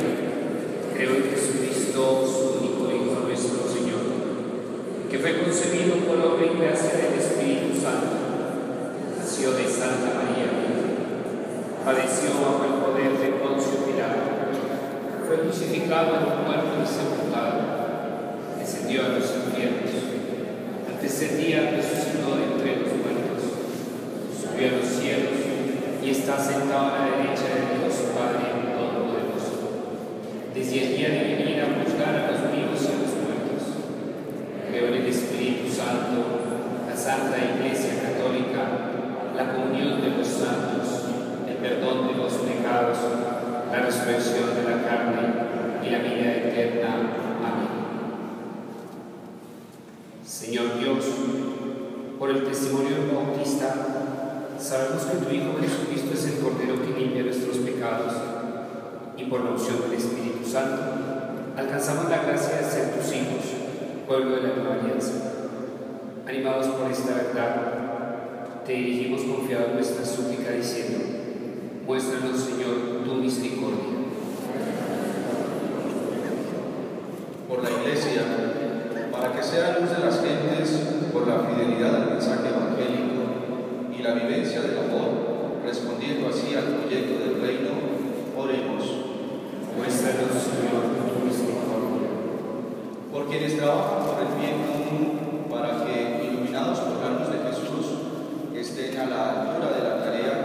Chapinero Central, Bogotá, Colombia - Misa en la Catedral de Lourdes
Oraciones de domingo en la Iglesia de nuestra señora de Lourdes.
Cundinamarca, Colombia, 19 January 2014